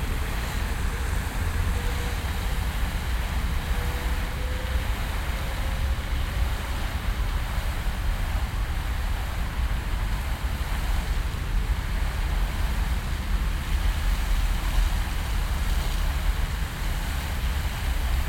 Düsseldorf, Hofgarten, Fontänenbrunnen
Rauschen des Fontänenbrunnens " de gröne jong" und das Rauschen des Verkehrs von der Hofgartenstrasse, an einem leicht windigen Nachmittag
soundmap nrw: topographic field recordings & social ambiences